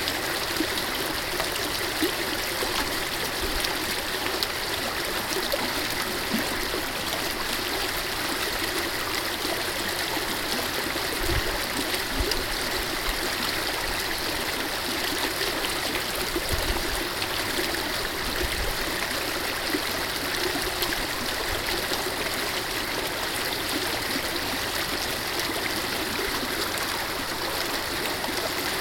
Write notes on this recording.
Binaural recording of one of the many irrigation canals you can find in the Andes valley of the river Aynín in Perú.